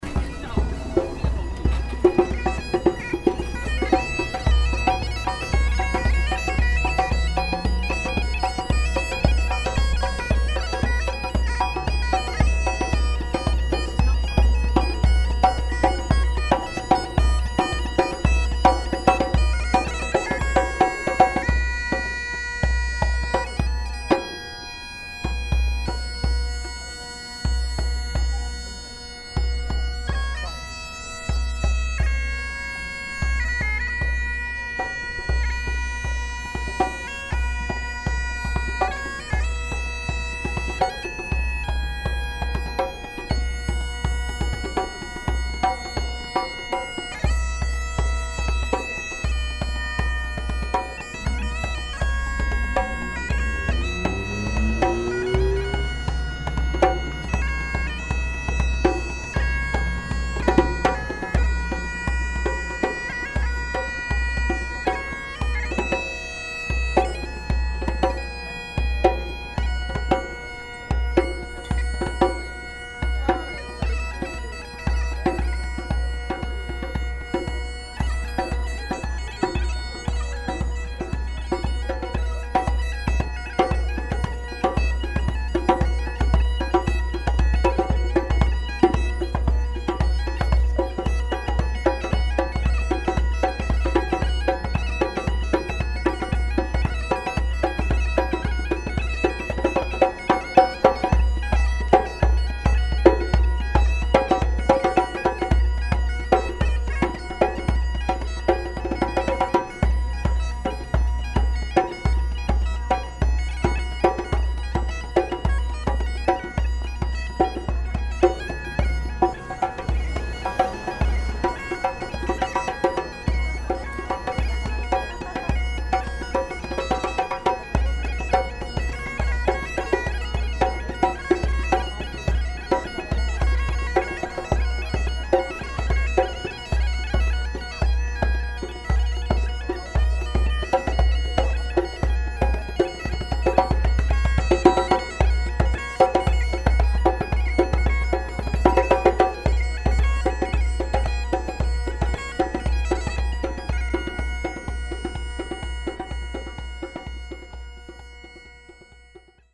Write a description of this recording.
street musicians playing in the rare duo constellation irish bagpipe and african djembe. soundmap international, social ambiences/ listen to the people - in & outdoor nearfield recordings